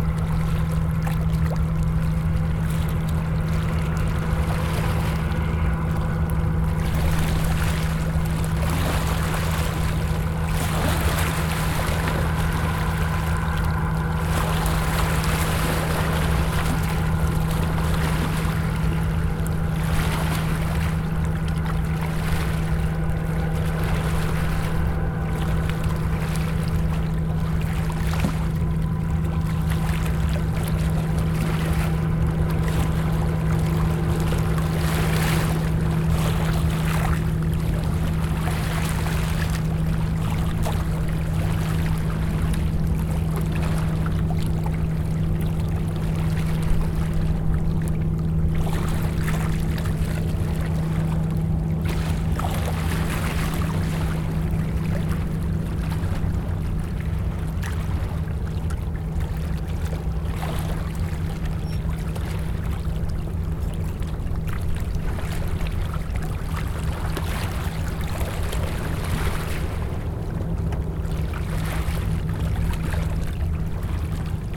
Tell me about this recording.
Western tip of Tommy Thompson Park. Zoom H4n with standard foam wind cover. Begins with sound of what appeared to be a dredging boat or something similar, leaving a harbour area off to the right. Later, a pleasure cruiser comes in from the left, towards the harbour. Eventually, wakes from the two boats can be heard breaking on the shore.